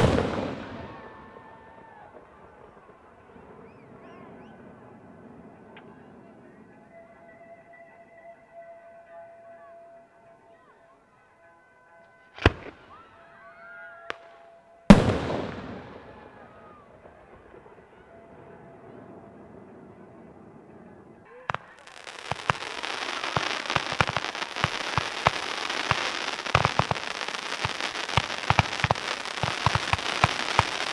Rte de L’Izoard, Arvieux, France - Arvieux en Queyras - Feu d'artifice du 14 juillet
Arvieux en Queyras
Feu d'artifice du 14 juillet
Ambiance
France métropolitaine, France, 14 July 2001, 22:30